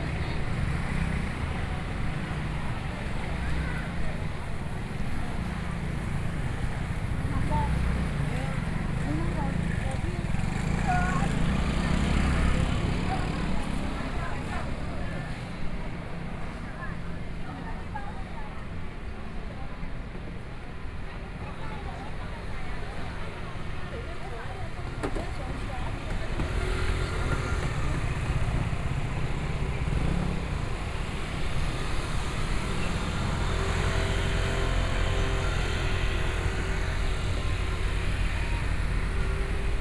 Yong'an St., Changhua City - soundwalk
walking in the street, Traditional market and the Bazaar, Zoom H4n+ Soundman OKM II
8 October 2013, ~13:00